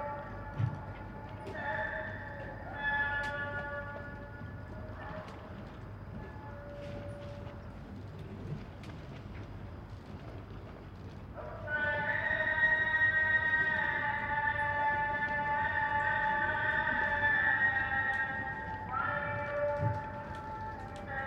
Recording of an early morning call to prayer.
AB stereo recording (17cm) made with Sennheiser MKH 8020 on Sound Devices MixPre-6 II.
Ege Bölgesi, Türkiye